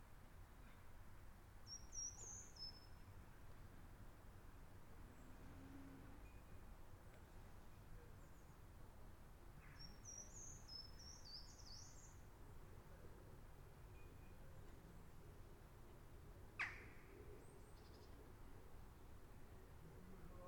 Pastorijstraat, Buggenhout, België - Parkje
[Zoom H4n Pro] Small, quiet park in the center of Buggenhout.
Buggenhout, Belgium